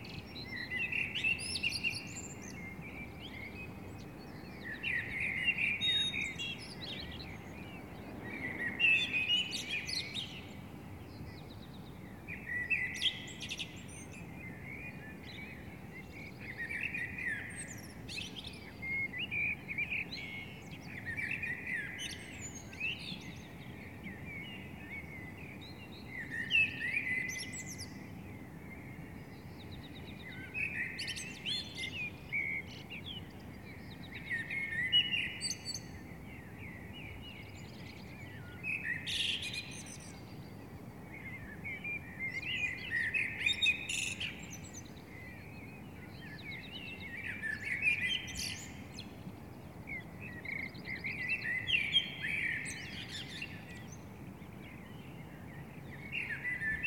Pflügerstraße, Berlin, Deutschland - Birds at Dawn
If you can't sleep, you can still record - and even at a time when you would normally sleep...
And it's beautiful to do that.
From top floor window to backyard.
On a Sony PCM100 with mics in pan mode
8 June 2020, ~04:00